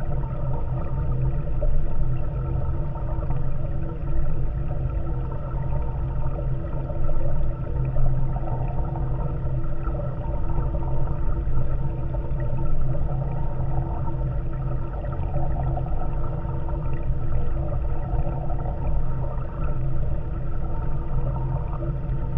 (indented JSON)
{
  "title": "Mizarai, Lithuania, dam railing",
  "date": "2022-09-08 19:50:00",
  "description": "Raings of small dam. Geophones contact recording",
  "latitude": "54.02",
  "longitude": "23.93",
  "altitude": "109",
  "timezone": "Europe/Vilnius"
}